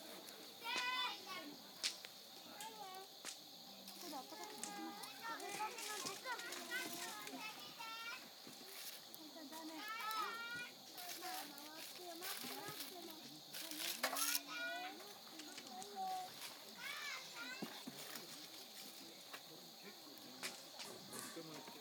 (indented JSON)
{
  "title": "Kadogawa, Miyazaki, Japan - Before Bedtime at a Campsite in Miyazaki",
  "date": "2019-04-22 22:33:00",
  "description": "I don't remember the name of this campsite, but I made this recording while waiting for the embers of our fire to die down and kids to calm down and go to bed.",
  "latitude": "32.48",
  "longitude": "131.51",
  "altitude": "475",
  "timezone": "Asia/Tokyo"
}